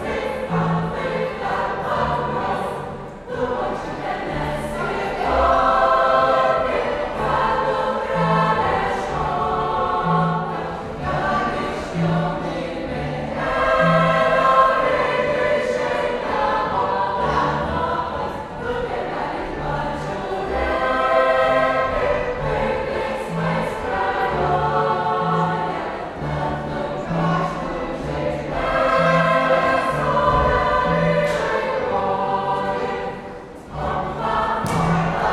Utena, Lithuania, rehearshal at school
folk ensemble reheashal